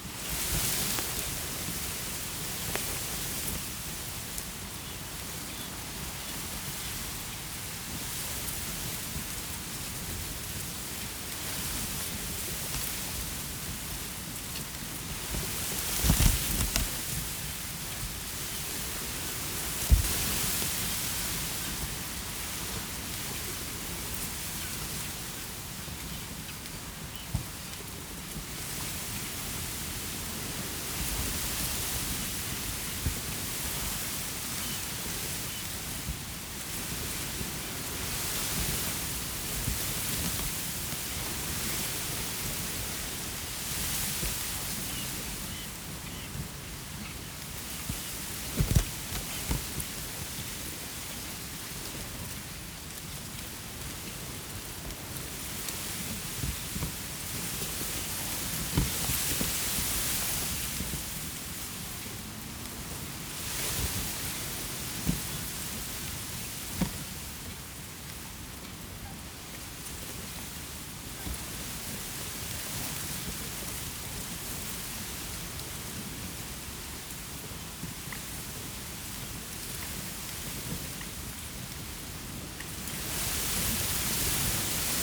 Wind in summer reeds beside the water filled quarry pit, New Romney, UK - Wind in summer reeds beside the water filled quarry pit
In the late July the reeds are green and their sound in wind has a lovely softness. As they dry through the autumn and winter it becomes more brittle and hard. The occasional churring in this recording is probably a reed warbler. 26/07/2021
England, United Kingdom, 26 July